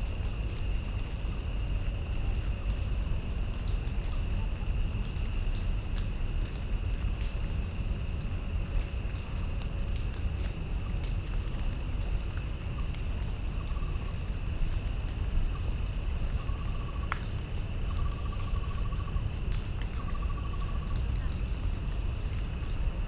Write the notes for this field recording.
in the park, Sony PCM D50 + Soundman OKM II